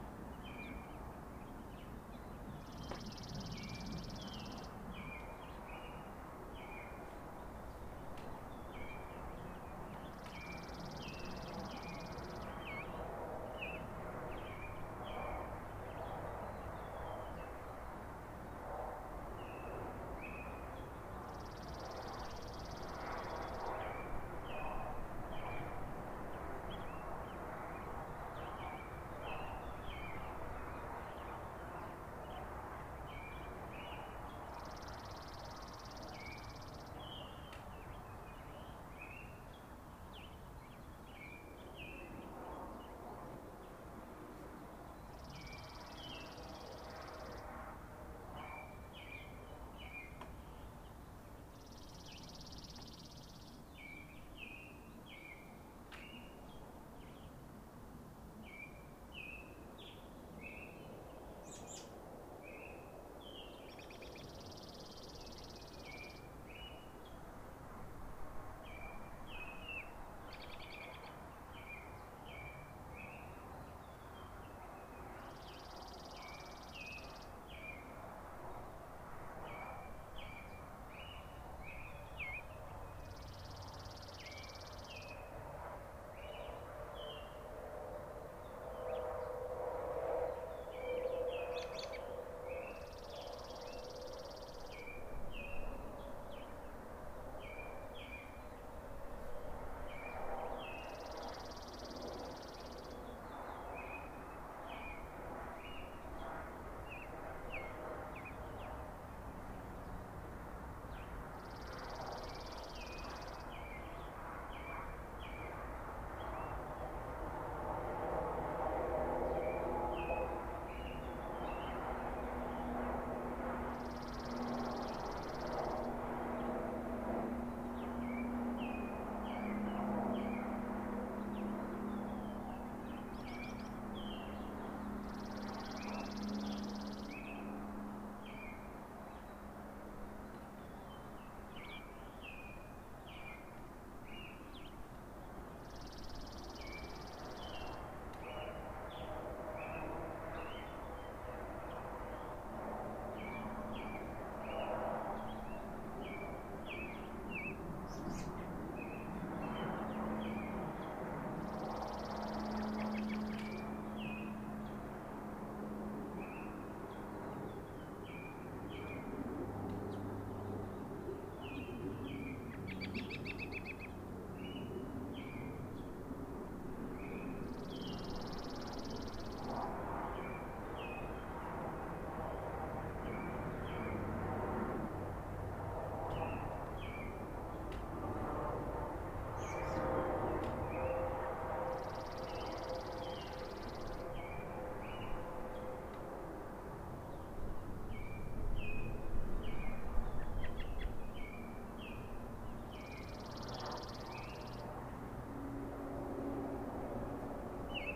Glorieta, NM, so called USA - GLORIETA july continues
chinqi is really enjoying these fresh july sounds...